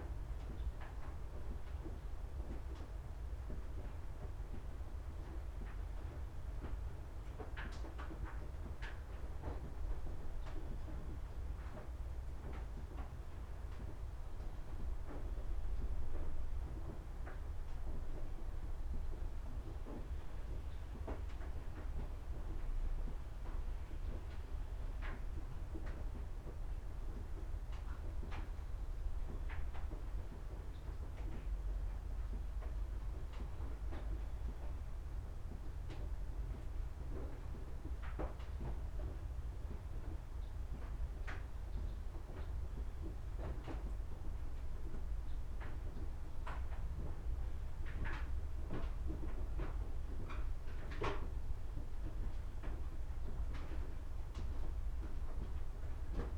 room window, Gojo Guest House Annex, Kyoto - wooden corridor, rainy night
steps and drops